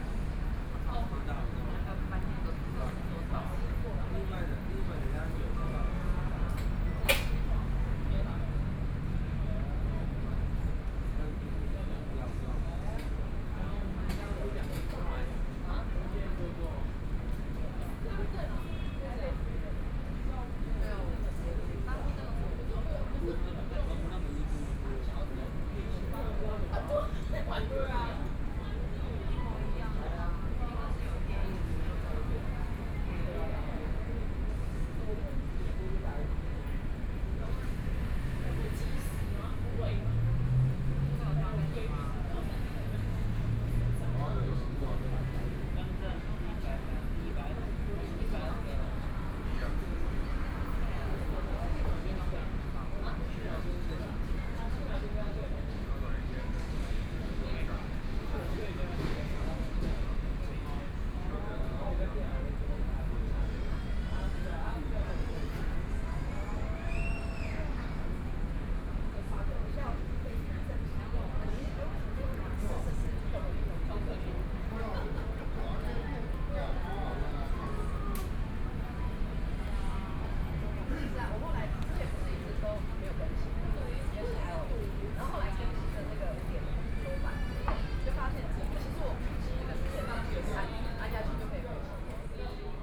康樂里, Taipei city - Chat
Outdoor seating area and coffee, Traffic Sound, Environmental sounds, Pedestrian
Please turn up the volume a little
Binaural recordings, Sony PCM D100 + Soundman OKM II
Taipei City, Taiwan